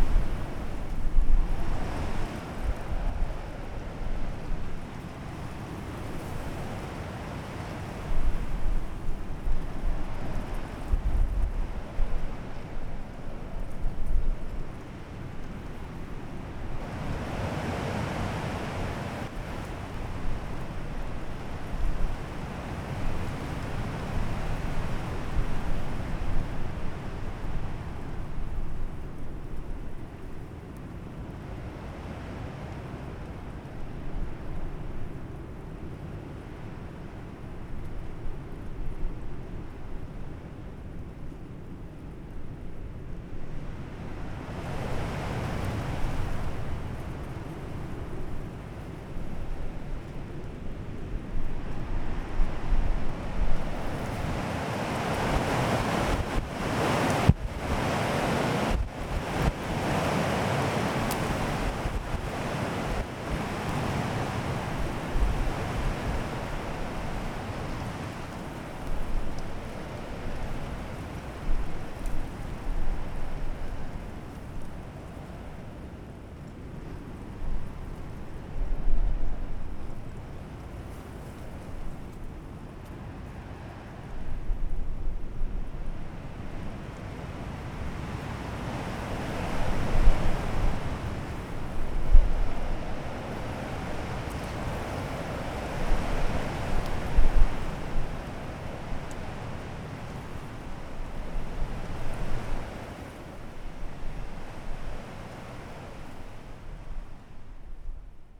during storm
the city, the country & me: march 7, 2013